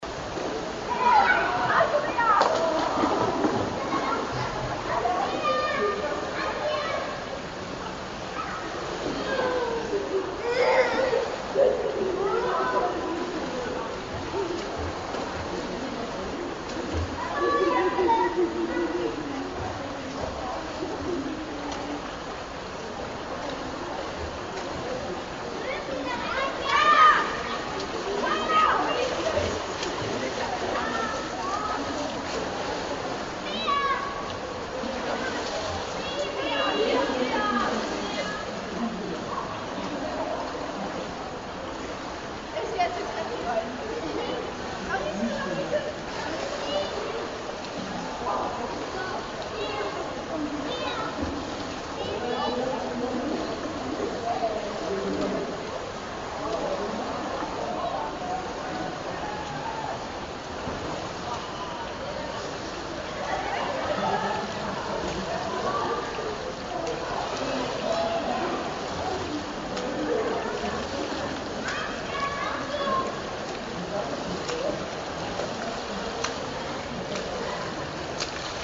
Stadtbad Tiergarten, swimming pool on a winter wednesday
Air temperature outside: -3°C, air temperature inside 29°C, water temperature 27°C.
It makes you wanna move...